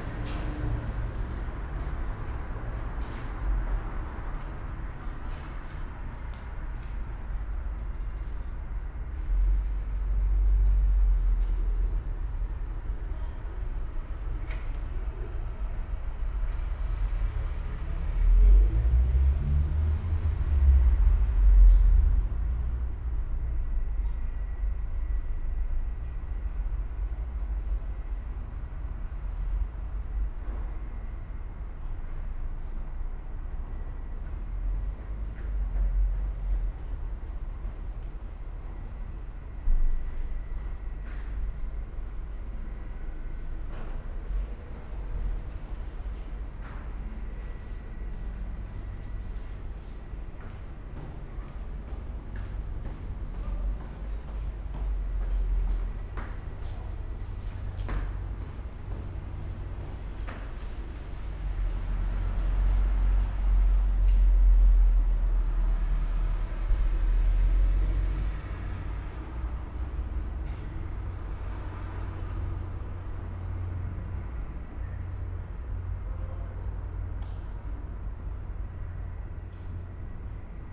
Südbrücke railway bridge, Köln Poll - stairway ambience, passers-by
Köln Südbrück railway bridge, stairway ambience, joggers, bikers and passers-by
(Sony PCM D50, DPA4060)
Cologne, Germany, August 2013